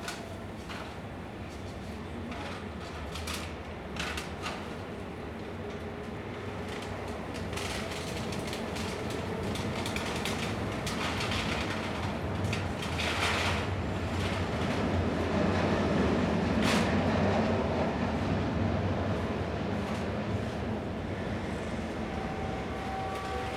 Poznan, Jerzyce, at the office, small larder - scrap metal collectors
a man trampling beverage cans and putting them into a bag. another one approaching with a handful of copper pipes. they exchange a few words and leave.
1 July 2014, 14:44